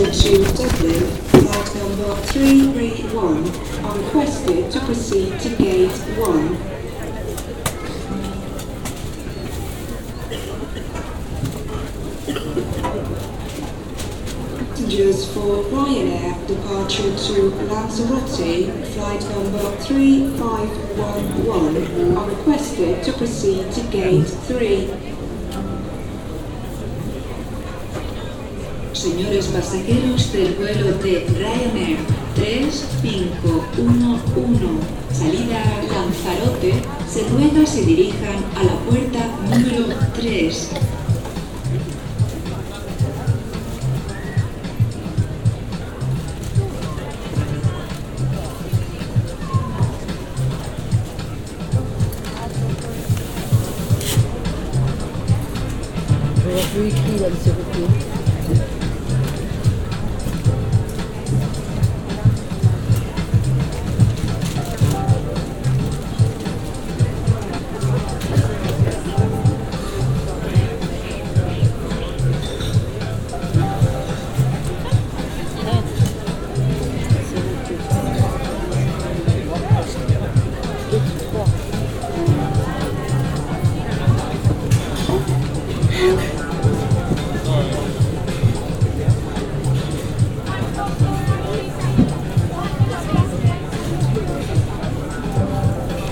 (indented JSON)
{
  "title": "Airport Approach Rd, Luton, Royaume-Uni - Airport of Luthon Londres",
  "date": "2016-12-20 06:45:00",
  "description": "It was recorded by zoom h4n, and binaural microphones. In a bar close to gate 1 inside the airport of Luthon in London in december 2016. People are waiting, drinking.",
  "latitude": "51.88",
  "longitude": "-0.38",
  "altitude": "160",
  "timezone": "Europe/London"
}